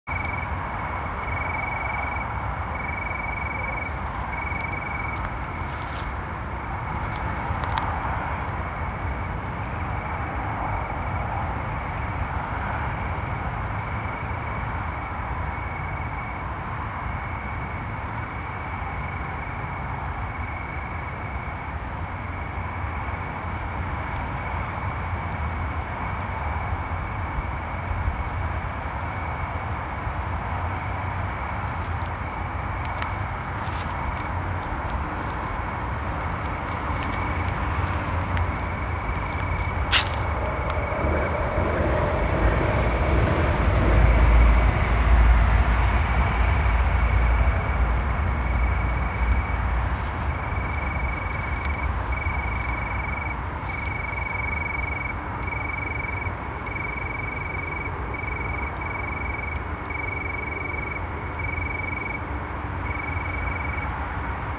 Wanheimer Str., Düsseldorf, Deutschland - Zikaden (?) in Deutschland
Gewerbepark in Duesseldorf - Lichtenbroich german cicades (or what's that?)
4 September 2021, 22:56